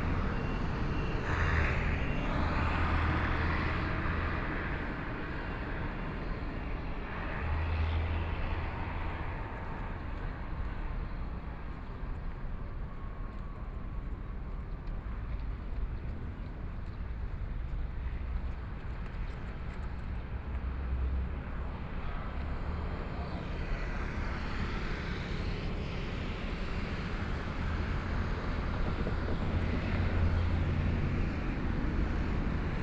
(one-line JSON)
{"title": "Jiexing 1st St., Gushan Dist. - walking on the Road", "date": "2014-05-21 18:17:00", "description": "Traffic Sound\nSony PCM D50+ Soundman OKM II", "latitude": "22.62", "longitude": "120.28", "altitude": "3", "timezone": "Asia/Taipei"}